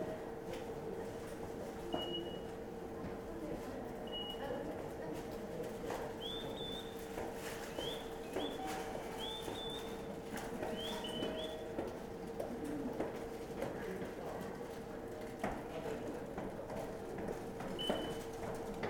Chome Komaba, Meguro-ku, Tōkyō-to, Japan - Bird sound speaker at the train station
I was in the station when I heard this amazing bird sound; it was not familiar to me, so I walked around, trying to tune in and listen more. I found a place where the noise seemed louder, and listened for a while before realising the sound was strangely repetitive... I looked up to discover that there was a speaker above my head playing the sound. Not sure what the original bird call is, nor why it is playing in the Komaba Todai-mae station, but I thought this was an interesting feature of the Tokyo soundscape.